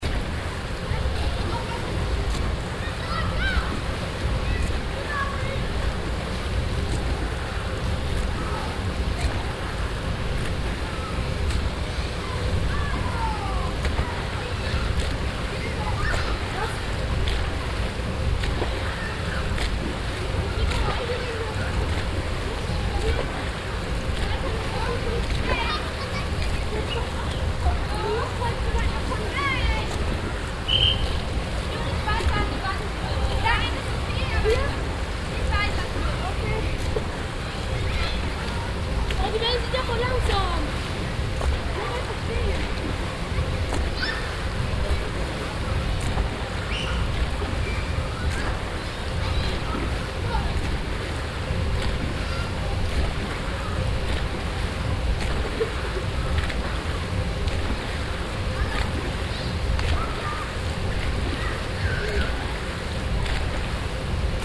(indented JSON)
{
  "title": "refrath, saaler mühle, wellenbad - refrath, saaler mühle, mediterana, wellenbad",
  "description": "soundmap: refrath/ nrw\nrefrath, mediterana - wellenbad und pumpanlage, letzte badgeräusche vor dem umbau der alten hallenanlage im juli 2008\nproject: social ambiences/ listen to the people - in & outdoor nearfield recordings",
  "latitude": "50.97",
  "longitude": "7.14",
  "altitude": "93",
  "timezone": "GMT+1"
}